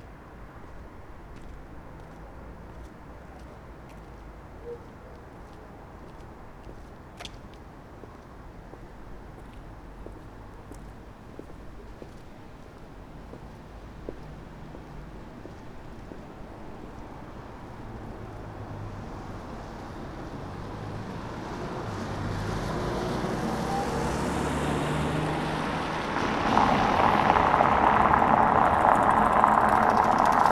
Berlin: Vermessungspunkt Maybachufer / Bürknerstraße - Klangvermessung Kreuzkölln ::: 12.10.2011 ::: 02:31